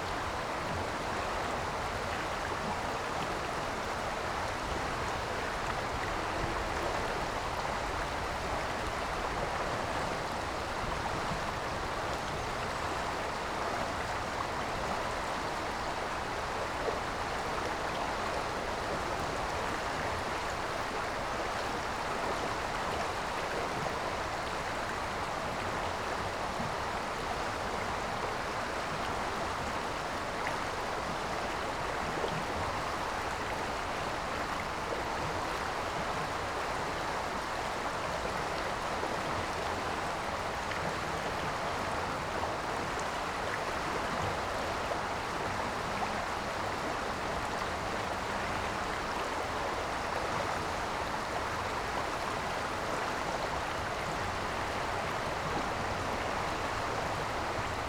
Kerkerbachtal, Hofen, Deutschland - Kerkerbach creek ambience
small river / creek Kerkerbach near village Hofen, water flow from about 5m above, below trees
(Sony PCM D50, Primo EM272)